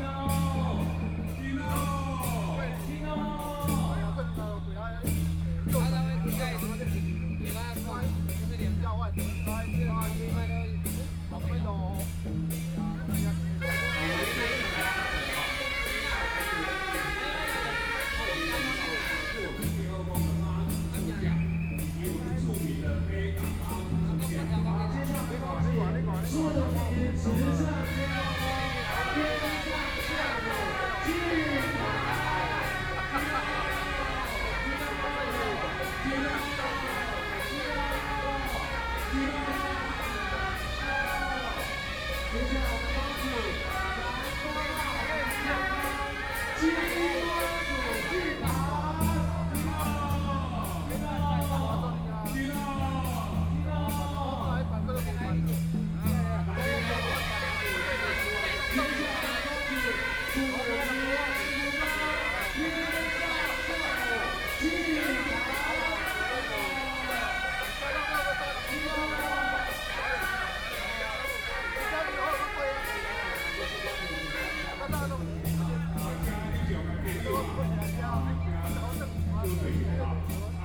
{
  "title": "National Taiwan Museum, Taipei City - Traditional temple festivals",
  "date": "2013-11-16 12:15:00",
  "description": "Traditional temple festivals, Ceremony to greet the gods to enter the venue, Binaural recordings, Zoom H6+ Soundman OKM II",
  "latitude": "25.04",
  "longitude": "121.52",
  "altitude": "21",
  "timezone": "Asia/Taipei"
}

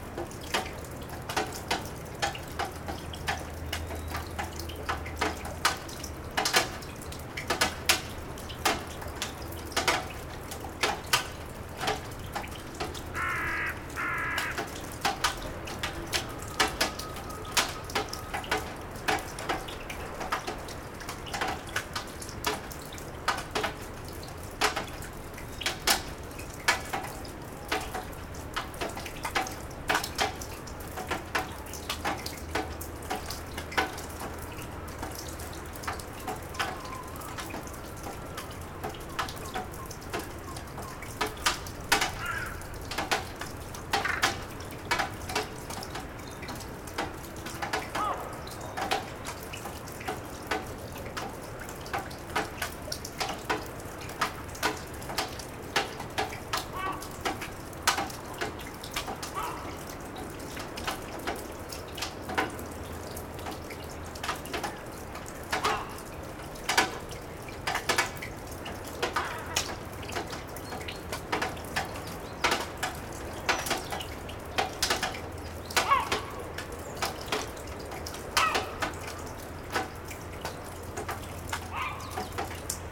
{
  "title": "melting snow drips, Kopli Tallinn",
  "date": "2011-03-16 13:15:00",
  "description": "drips from the spring thaw among the ruins of wooden houses in Kopli",
  "latitude": "59.46",
  "longitude": "24.67",
  "altitude": "11",
  "timezone": "Europe/Tallinn"
}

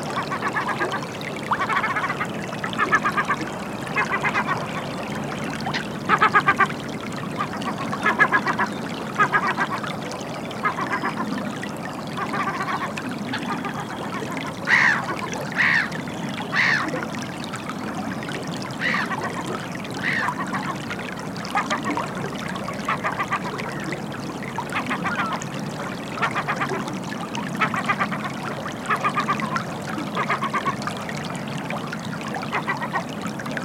{
  "title": "Orford Ness National Trust nature reserve, Suffolk. - Outflow from Stoney Ditch lagoon",
  "date": "2016-05-08 07:14:00",
  "description": "Water flowing out of lagoon fed by Stoney ditch with background birds and low frequency ships engines off Felixtowe.\nSound Devices 702/MKH8060",
  "latitude": "52.09",
  "longitude": "1.58",
  "altitude": "1",
  "timezone": "Europe/London"
}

